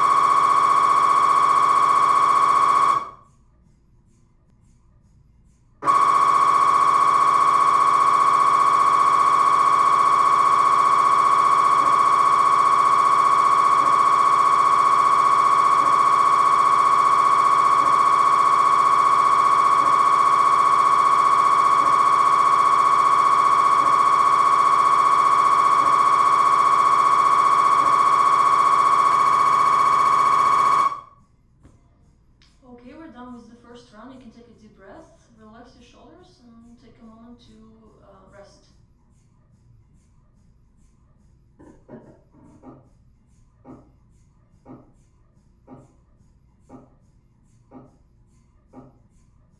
Neuro science research is carried out to look into how neuro-plasticity may help people with Tinnitus or other hearing loss.
Gangwon-do, South Korea, 28 April 2015